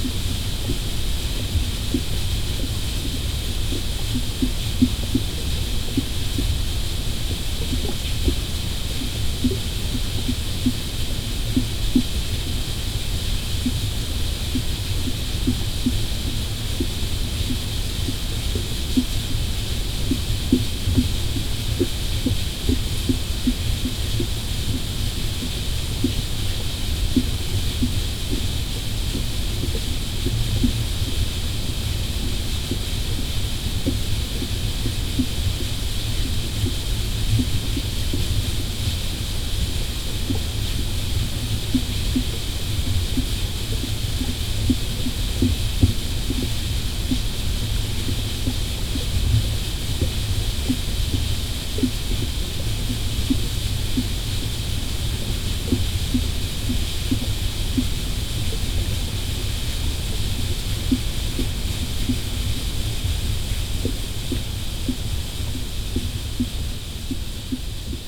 Contact microphone on a log in water near waterfall.
AKG c411, MixPreII